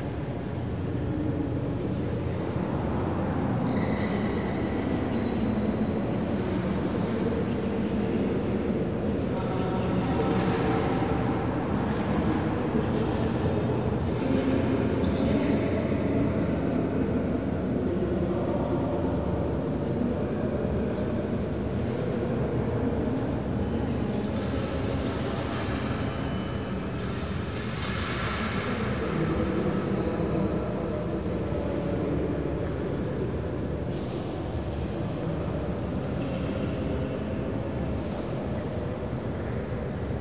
The Jacobins, a Dominican monastery built in 1229, is an exceptional testimony to southern gothic design. This brick architecture uses the same principles developed for the cathedrals of the kingdom of France.
massive huge reverb captation : Zoom H4n